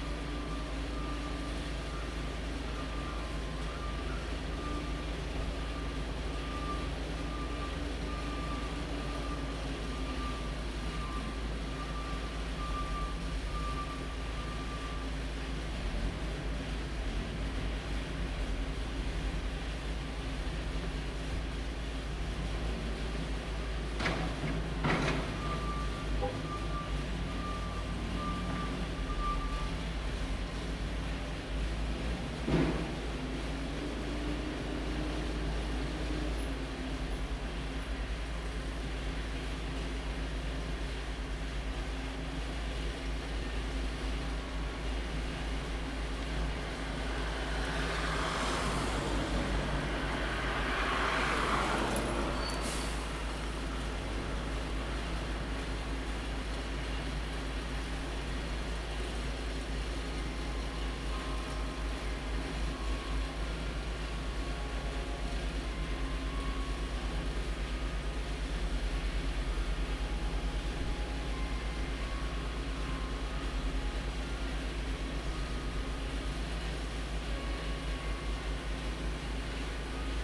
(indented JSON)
{
  "title": "Allentown, PA, USA - Central East Side of Muhlenberg College Campus",
  "date": "2014-12-08 12:00:00",
  "description": "The temperature was just above freezing and the sun cracked through the clouds. The sounds of traffic, construction, and the noon bell of the Haas building are present.",
  "latitude": "40.60",
  "longitude": "-75.51",
  "altitude": "121",
  "timezone": "America/New_York"
}